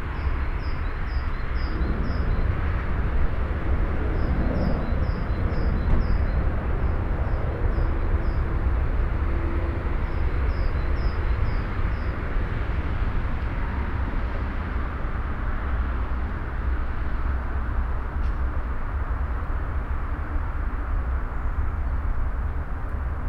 Diegem, near the Ring.
Diegem, proche du Ring.